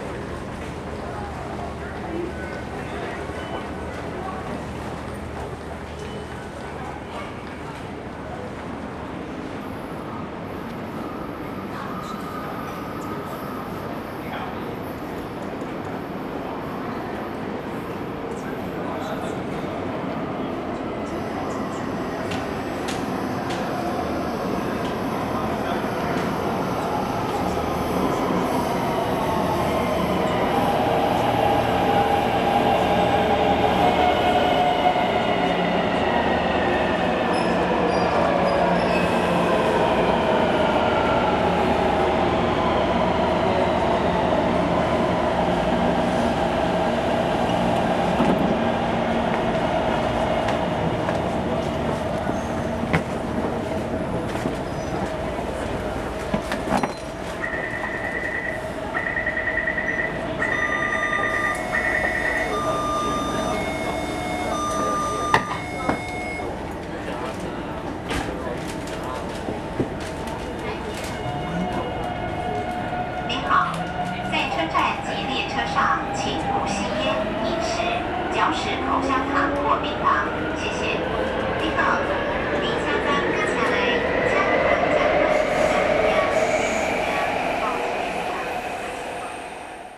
New Taipei City, Taiwan - walking into the MRT Station
walking into the MRT Station
Sony Hi-MD MZ-RH1 +Sony ECM-MS907
Banqiao District, New Taipei City, Taiwan, 25 January 2012, ~19:00